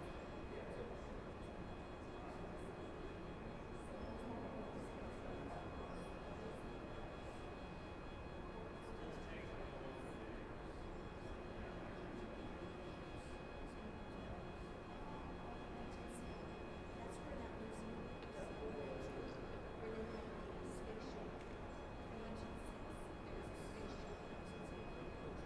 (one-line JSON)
{"title": "Flushing Meadows Corona Park, Queens, NY, USA - Panorama Of The City Of New York 1", "date": "2017-03-04 14:45:00", "description": "Standing under the flight path of a model plane landing and taking off from a model LaGuardia Airport in the Panorama of The City of New York Exhibit in The Queens Museum", "latitude": "40.75", "longitude": "-73.85", "altitude": "10", "timezone": "America/New_York"}